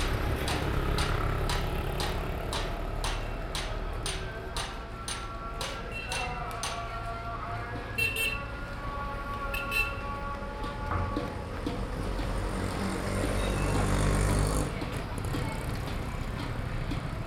India, Karnataka, Bijapur, Upli Buruz, Jar manufacturing, muezzin
Bijapur, Karnataka, India